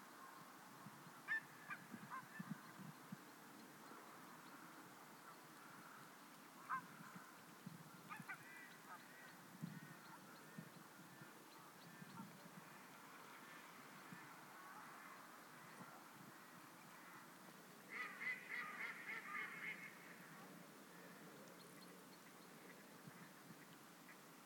Orgerus, France - Hundreds of crows and ducks in a private lake